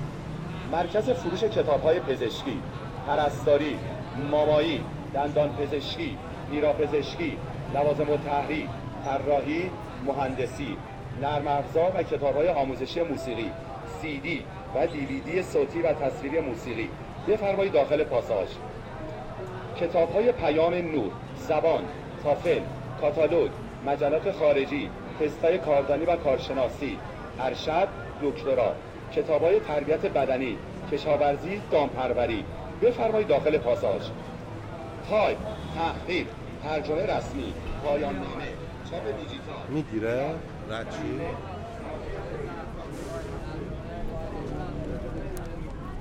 Tehran Province, Tehran, District, Enghelab St, No., Iran - Book sellers on the street